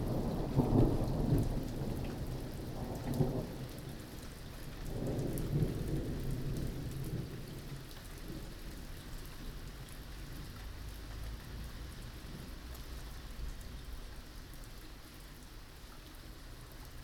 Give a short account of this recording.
Recorded on a roofterrace. Thunder: Rose ringed parakeets and pigeons are eating but eventually they flee the rain that turns into hail a few minutes later. You can also hear the Carillon of the Grote Kerk. Binaural recording.